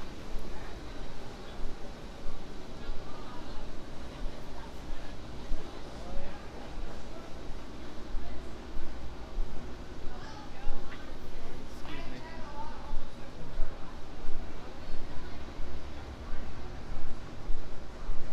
Roanoke, VA, USA - Roanoke Walk
A Friday night walk around downtown Roanoke, Virginia.
Binaural
Sony PCM-M10
MM BSM-8
14 May 2015